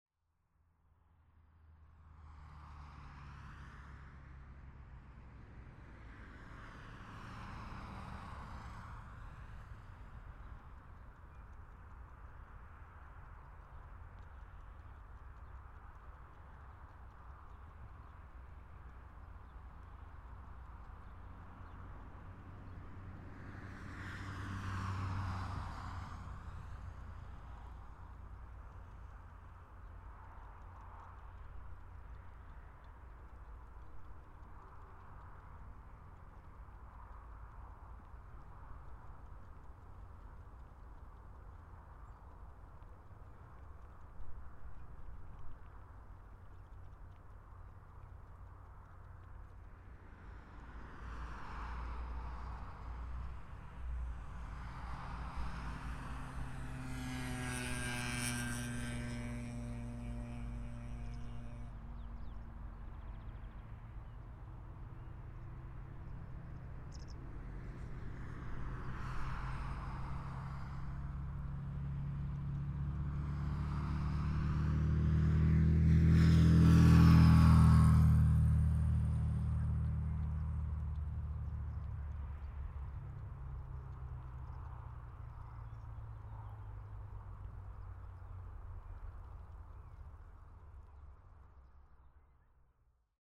Weimar, Deutschland - suedpunkt
SeaM (Studio fuer elektroakustische Musik) klangorte - suedPunkt
Weimar, Germany, 23 April 2012